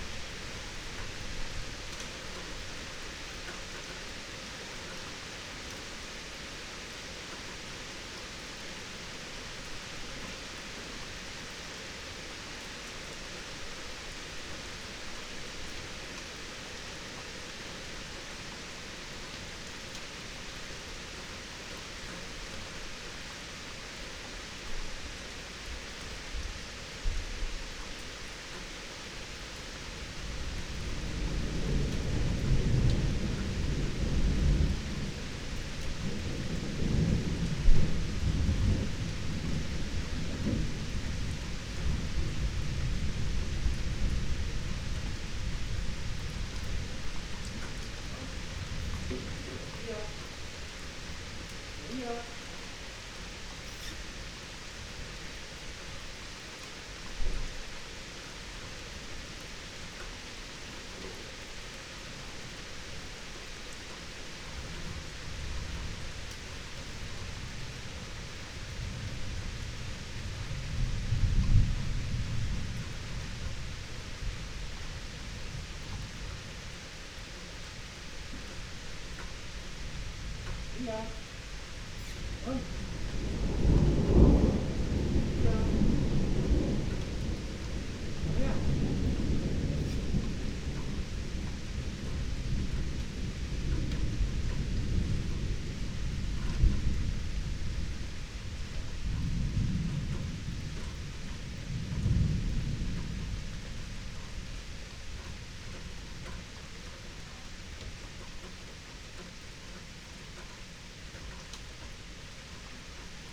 19:21 Berlin Bürknerstr., backyard window - Hinterhof / backyard ambience